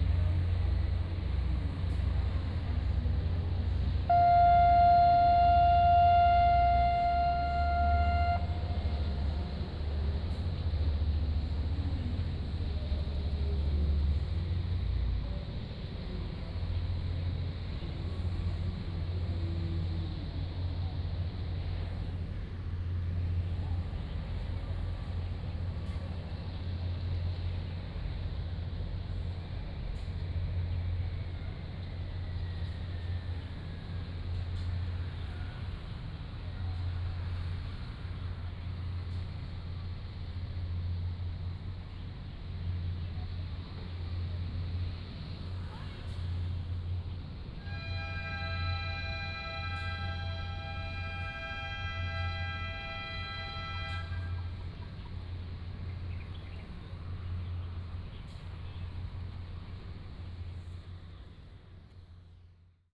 小琉球遊客中心, Hsiao Liouciou Island - in the Park
Outside the visitor center, Distant ship's whistle
臺灣省 (Taiwan), 中華民國